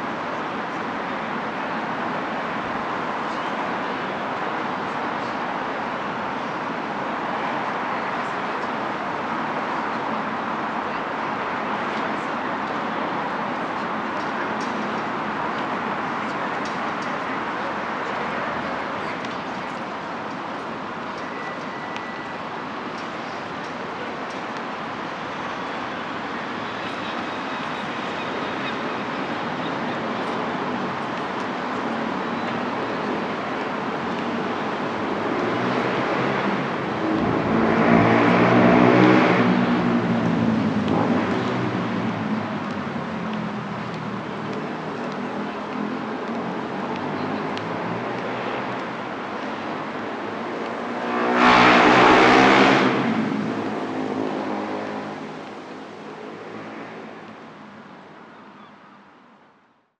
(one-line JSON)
{"title": "Custom House Square, Belfast, UK - Albert Memorial Clock-Exit Strategies Summer 2021", "date": "2021-07-04 18:09:00", "description": "Recording of buses passing on their route, some teenagers shouting in the area, the chatter from pedestrians, and a loud motorcycle riding by.", "latitude": "54.60", "longitude": "-5.92", "altitude": "8", "timezone": "Europe/London"}